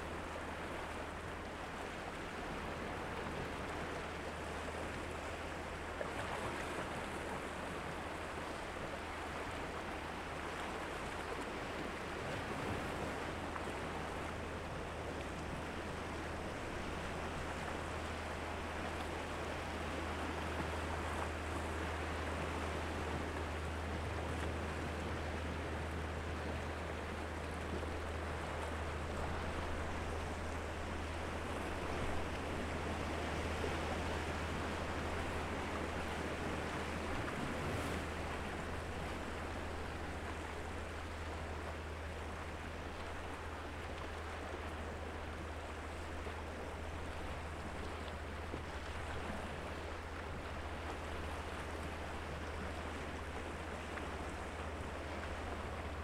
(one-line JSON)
{"title": "Boulevard Vaufleury, Granville, France - 018 AMB GRANVILLE POINTE DU ROC MER LOIN OISEAUX BATEAUX MIX PRE 6 HAUN MBP 603 CARDIO ST AB", "date": "2021-05-28 11:43:00", "latitude": "48.83", "longitude": "-1.61", "altitude": "7", "timezone": "Europe/Paris"}